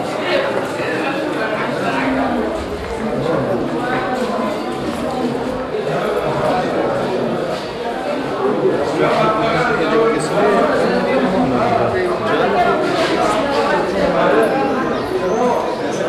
Souks, Tunis, Tunesien - tunis, medina, souks, soundwalk 02
Walking inside the crowded Souks. Passing by different kind of shops, some music coming from the shops, traders calling at people, voices and movements. No chance to stop without being dragged into a store.
international city scapes - social ambiences and topographic field recordings
Tunis, Tunisia, 2 May 2012, 10:30